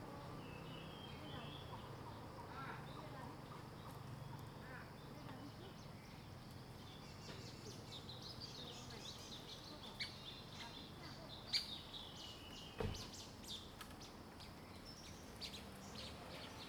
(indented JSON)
{
  "title": "Shuishang Ln., 桃米里, Puli Township - Bird sounds",
  "date": "2016-04-19 07:08:00",
  "description": "Bird sounds, Traffic Sound, In the woods\nZoom H2n MS+XY",
  "latitude": "23.94",
  "longitude": "120.92",
  "altitude": "555",
  "timezone": "Asia/Taipei"
}